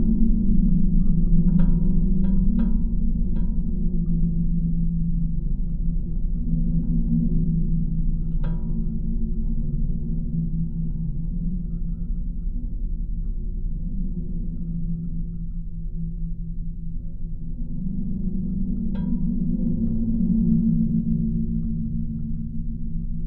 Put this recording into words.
contact microphones on a grounding wire of electricity pole